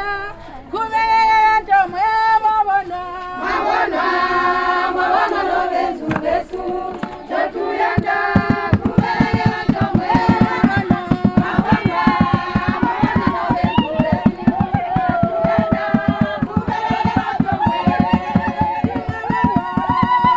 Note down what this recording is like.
...we are Mweezya Primary School, gathered under some trees. Today, we are meeting two local women groups, the Mweezya and Mweka Women’s Clubs. Mary Mwakoi from Community Development introduced us to the women. The Women are welcoming us with a song. Together with Monica and Patience from Zongwe FM, we are making recordings for our upcoming live shows….